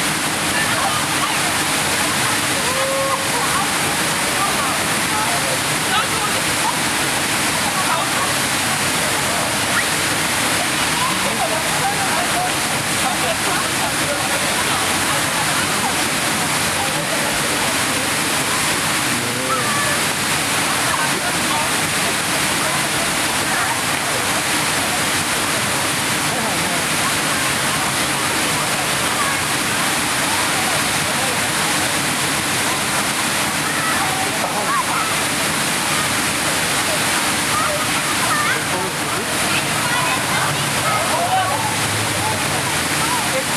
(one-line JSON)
{"title": "Zeche Zollverein, Essen, Deutschland - essen, zeche zollverein, schacht XII, water tower installation", "date": "2013-10-04 22:21:00", "description": "Am Schacht XII - der Klang von Besuchern und einem Wasser Turm - einer temporären Installation von rAndom International zur Ausstellung \"urbane Künste\" 2013. Eine Aufnahme freundlicherweise für das Projekt Stadtklang//:: Hörorte zur Verfügung gestellt von Hendrik K.G. Sigl\nAt Schacht XII- the sound of a water tower and visitors - at a temporary installation by rAndom International presented at \"urban arts\" in 2013\nyou can watch a video documentation of the object here:\nProjekt - Stadtklang//: Hörorte - topographic field recordings and social ambiences", "latitude": "51.49", "longitude": "7.04", "altitude": "51", "timezone": "Europe/Berlin"}